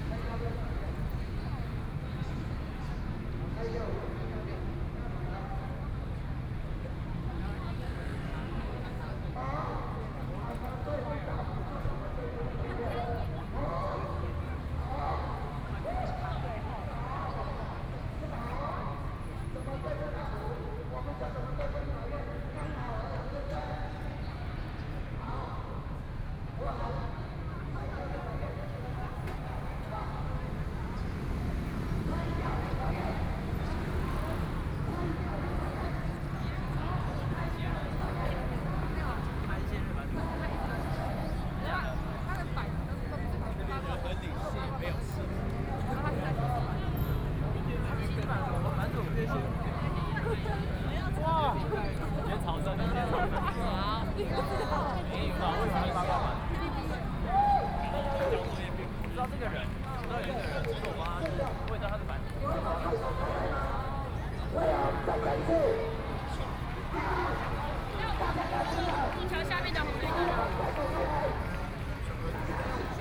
Legislative Yuan, Taipei City - Occupy Taiwan Legislature
Occupy Taiwan Legislature, Walking through the site in protest, Traffic Sound, People and students occupied the Legislature
Binaural recordings
20 March 2014, 22:20